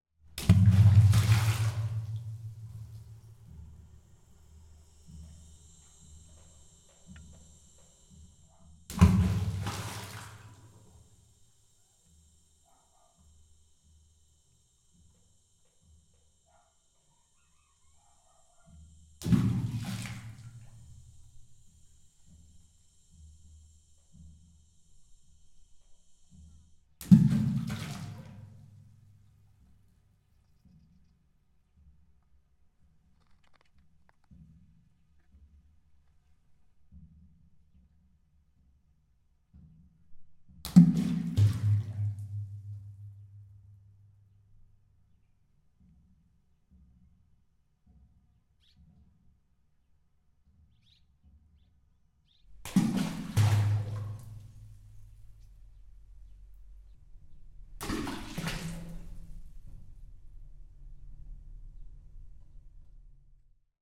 Resonant spaces (wells) activated with the droping of small rocks. Recorded with a pair of stereo matched primo 172 mics into a SD mixpre6.
Portugal, 9 August 2017, 11:20